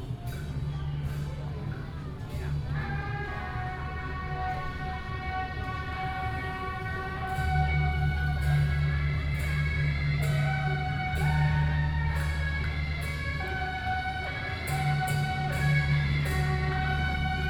Beixin Rd., Tamsui Dist. - Traditional temple festivals
Traditional temple festivals, Firecrackers